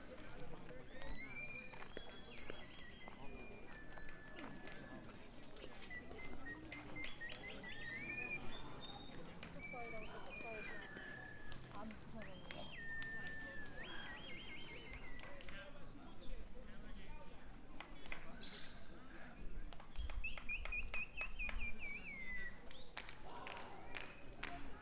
Yucatan, Mexico - Around the Kukulcán Pyramyd
This is a walk around the Kukulcan Pyramyd in Chichen Itza. Tascam DR-40 internal mics. Windy day.